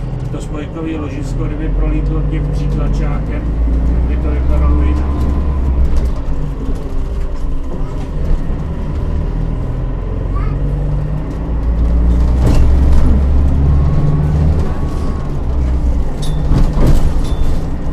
in the bus from Horni Pocernice to Cerny Most
bus ride in almost empty bus